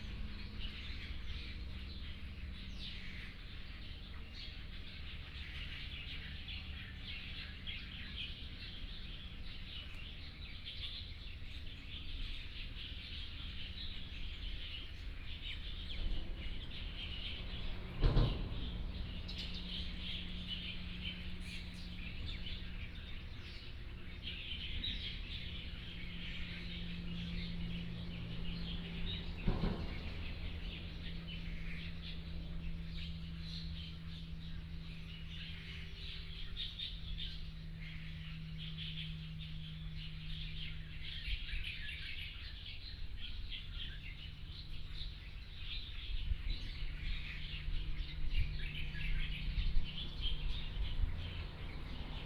Sec., Shanxi Rd., Taitung City - Birdsong
Birdsong, Traffic Sound, In the bridge below
9 September, ~10:00